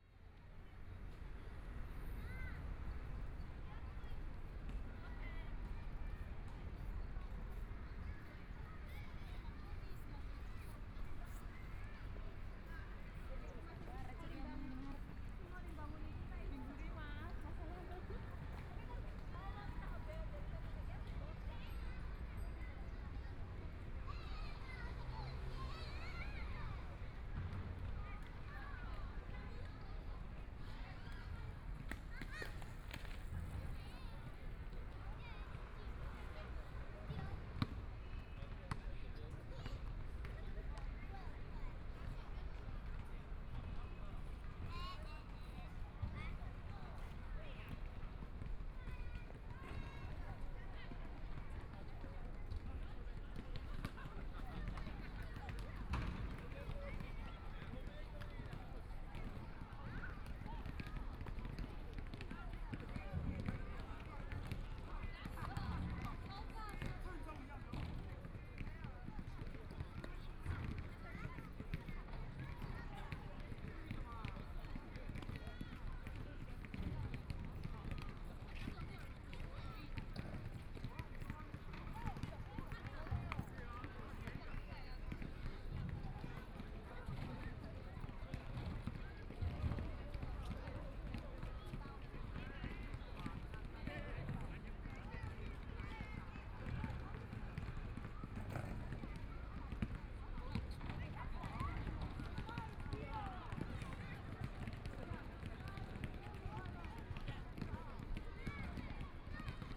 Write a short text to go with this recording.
Holiday, A lot of people are doing sports, Kids game sounds, Sunny mild weather, Environmental noise generated by distant airport, Binaural recordings, Zoom H4n+ Soundman OKM II